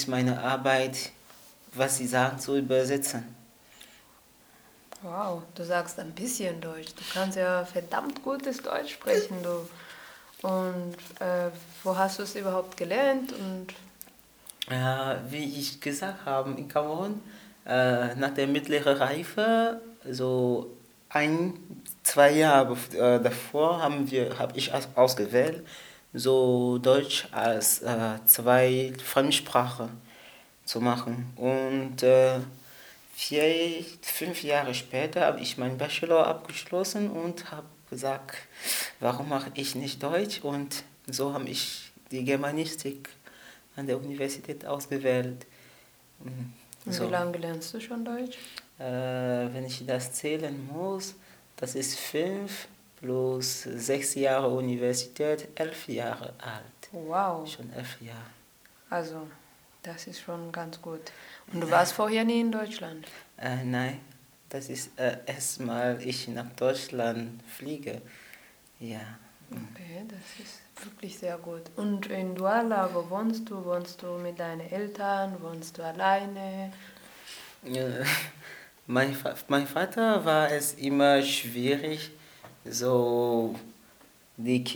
FUgE, Hamm, Germany - Marie-Claire interviews Bristol...
Marie Claire NIYOYITA, from “Zugvögel” Rwanda, interviews Bristol TEDJIODA, from Friedahouse International Cameroon during a workshop with radio continental drift. Both of them belong to the first group of young volunteers from the Global South hosted in Germany as guests of local NGOs. The “Reverse” Programme was initiated by Engagement Global together with a network of local sister-organisations, an effort to bring the “North-South-Dialogue” to local people and their organisations on the ground.
The complete playlists is archived here: